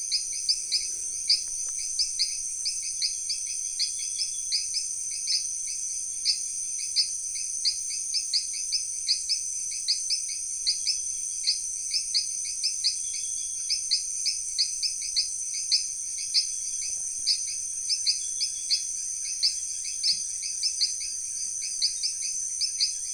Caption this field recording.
17h30 primary / secondary forest mixed. Low impacted area (only walking trails). Exact localization to be verified/updated.